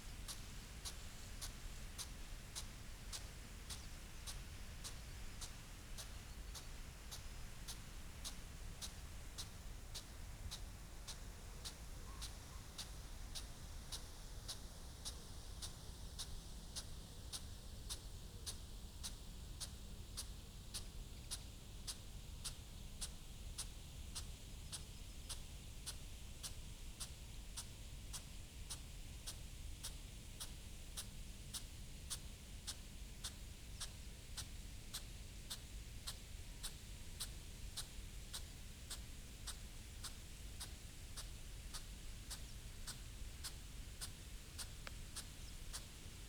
Malton, UK - crop irrigation ...
crop irrigation ... potatoes ... dpa 4060s clipped to bag to zoom h5 ... bird calls from ... yellow wagtail ... linnet ... wren ... pheasant ... wood pigeon ... sounds change as the spray hits cart track ...